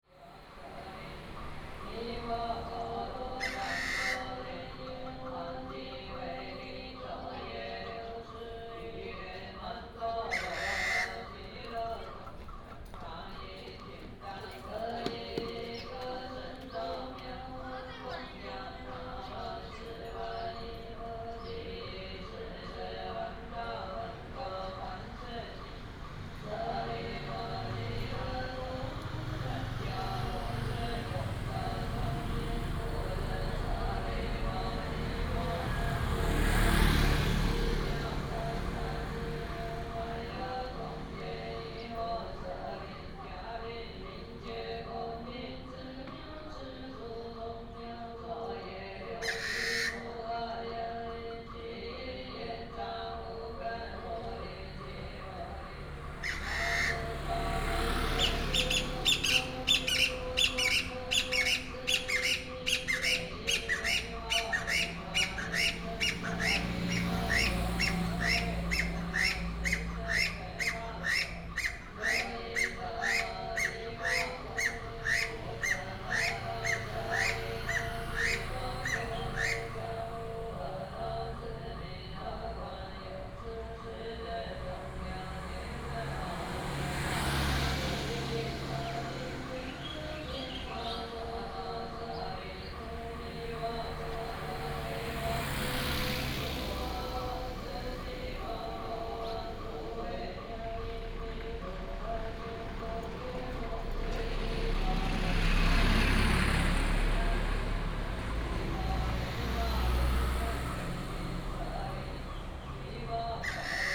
太麻里街, Taimali Township - Funeral chanting and Bird
Street in the village, Funeral chanting, Bird call, Traffic sound
Taitung County, Taiwan, 28 March 2018